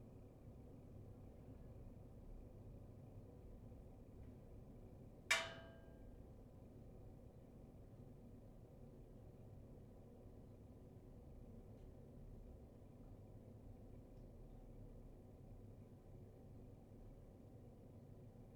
cold early winter day. the gas heating in my kitchen produces an interesting range of sounds during operation. you hear 3 modes: on, idle, off. mic close to the device. very distant outside sounds in the end, maybe through the chimney.
Berlin, Germany, 28 November, 16:30